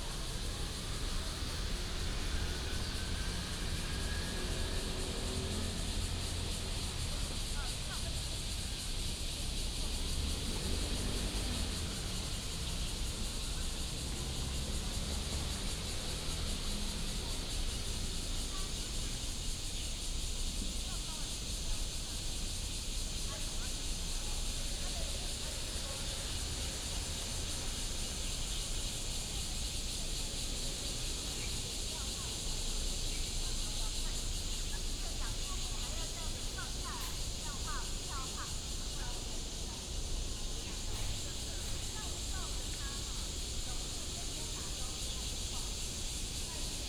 Xinlong Park, Da'an Dist. - Cicadas and Birds
in the Park, Cicadas cry, Bird calls, Traffic Sound
Taipei City, Taiwan, 28 June 2015, 18:35